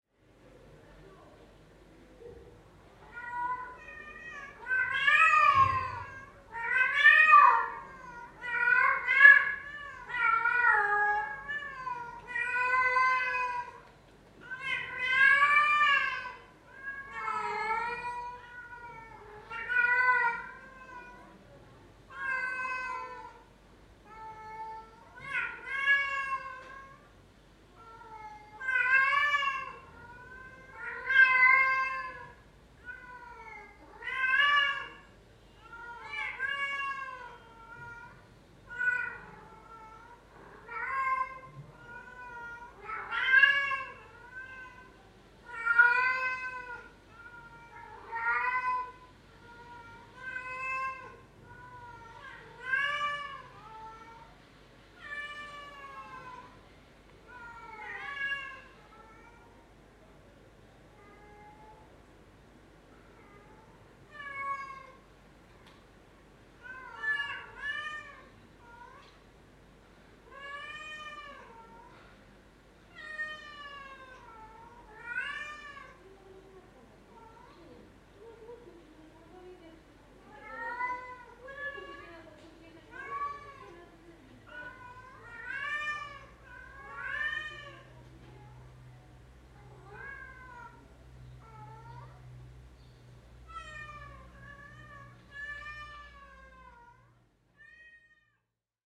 Rue Alkadira, Asilah, Morocco - Cats wailing
Two cats crying, one cat was up high on a wall the other (smaller cat) on the ground level near to where I stood.
(Recorded with a Zoom H5 and Soundman OKM I Solo)